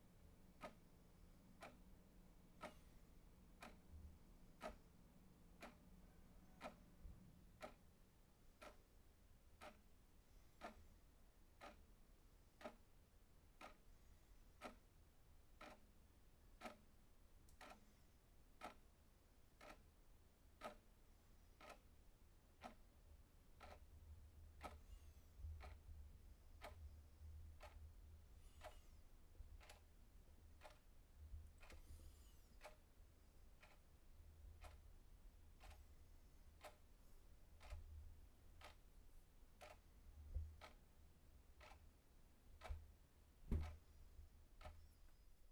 Shueilin Township, Yunlin - Clock sound
On the second floor, Clock sound, Zoom H6 M/S
雲林縣(Yunlin County), 中華民國, 31 January 2014